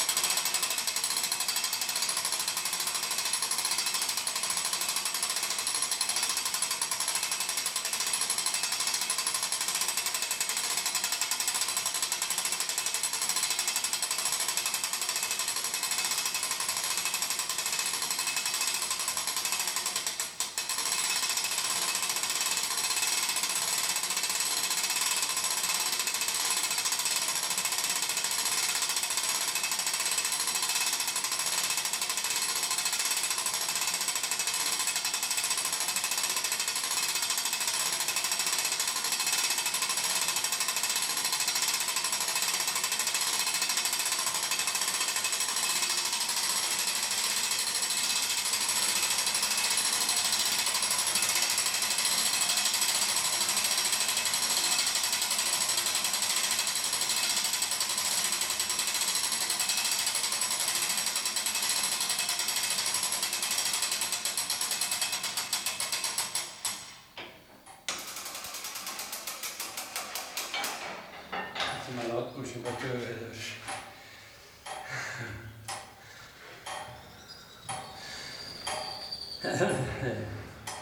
an old man rotating a big wheel that winds up a clock mechanism
Dubrovnik, Croatia